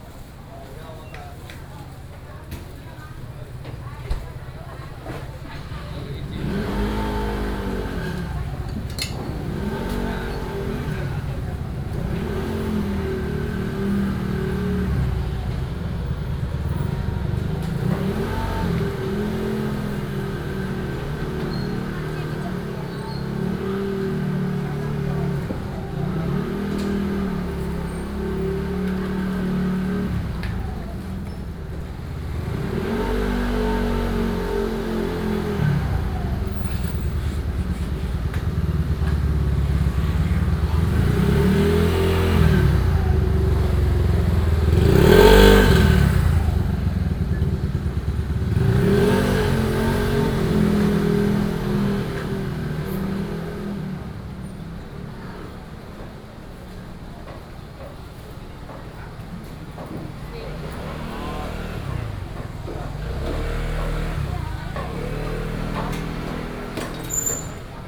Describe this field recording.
Walking through the market, Traffic Sound